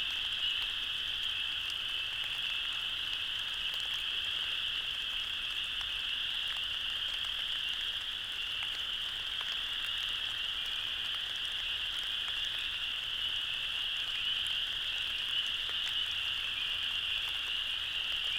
Lunsford Corner, Lake Maumelle, Arkansas, USA - Middle of night frog & insect drone in Ouachita forest
Excerpt (1 am, March 19, 2020) from a 14 hour long recording made in this location using MikroUsi (Lom) mic pair attached to a tree (head-spaced) about 40 cm above ground, into a Sony A10 recorder (128 Gb micro-SD card) powered by an Anker power bank (USB connector). This is about a 10 minute period during a light rain, with cricket frogs, spring peepers (frogs), other frogs, crickets and other insects calling constantly. The entire forest is reverberating with these sounds in all directions, creating a blend of hundreds (or thousands) of sounds that drone on all evening and all night. When I was there setting up the recorder, the frogs where so (painfully) loud that I wore headphones as ear protection.
Pulaski County, Arkansas, United States of America, 19 March 2020, 01:05